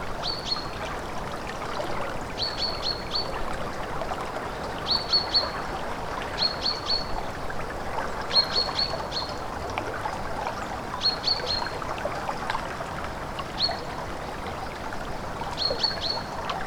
Vyzuonos, Lithuania, river Vyzuona - river Vyzuona
springy flow of Vyzuona river
28 March, ~17:00